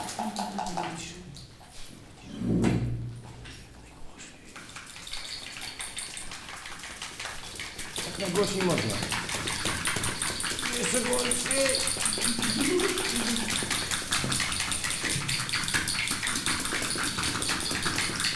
Wyspa Sobieszewska, Gdańsk, Poland - Orkiestra Hałasów